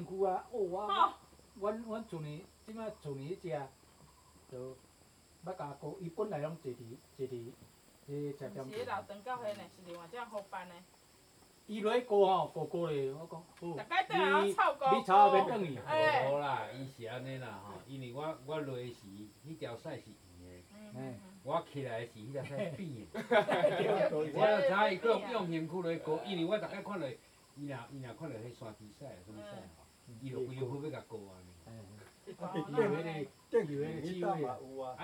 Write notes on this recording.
Taiwan cordial。, Zoon H2n (XY+MZ) (2015/09/08 007), CHEN, SHENG-WEN, 陳聖文